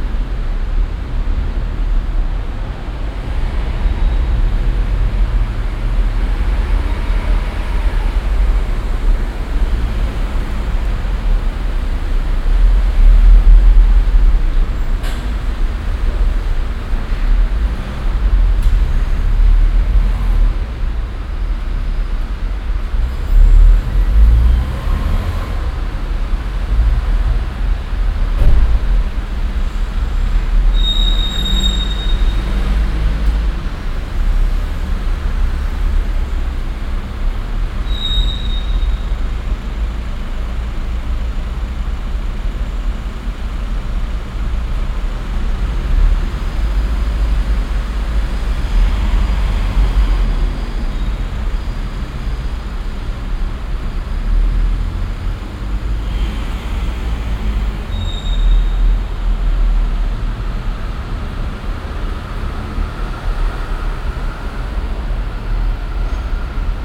cologne, an sankt agatha, parkhaus
parkhausbetrieb, nachmittags - anfahrende fahrzeuge, quietschen der reifen auf glattem belag, eine entfernte hupe
soundmap nrw:
social ambiences/ listen to the people - in & outdoor nearfield recordings